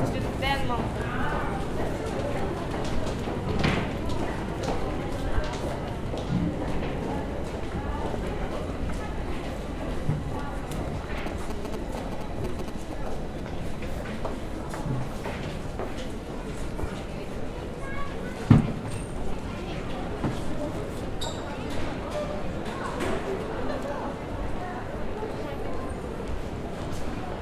Piata Uniri - Underground, Bucharest
Piata Uniri - Underground